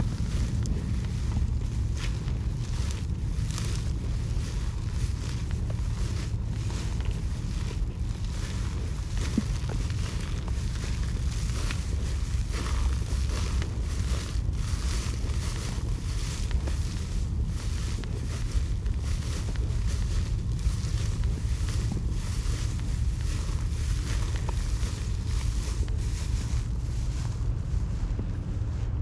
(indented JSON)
{
  "title": "marshland Staten Island",
  "date": "2012-01-09 14:30:00",
  "description": "footsteps in grass",
  "latitude": "40.61",
  "longitude": "-74.20",
  "timezone": "America/New_York"
}